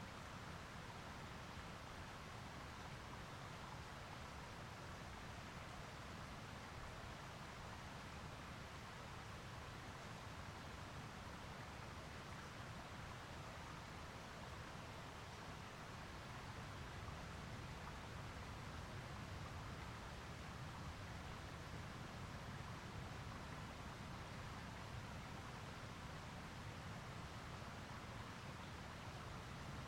This is a recording of a forest in the Área costera protegida Punta Curiñanco. I used Sennheiser MS microphones (MKH8050 MKH30) and a Sound Devices 633.